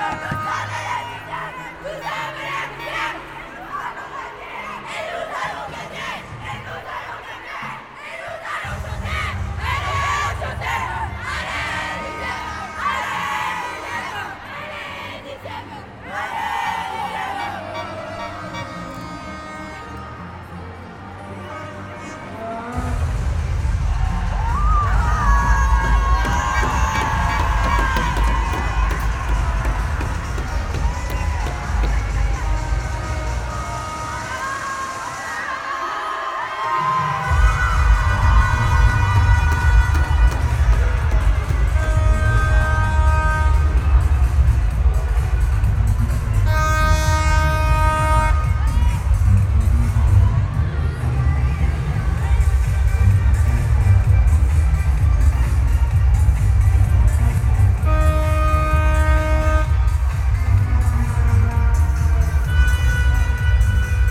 Mons, Belgium - K8strax race - Kallah girl scouts
Because it's us and we are proud to be like that ! Every year, scouts make a very big race, using cuistax. It's a 4 wheels bicycle, with two drivers. The race was located in the past in the city of Court-St-Etienne ; now it's in Mons city. The recording begins with horns. After, 2:45 mn, the Kallah guides (understand the river Kallah girl scouts) shout and sing before the race. I travel along them. Everybody is very excited to be here. The race is called k8strax. Its a codename for thighs + hunt down.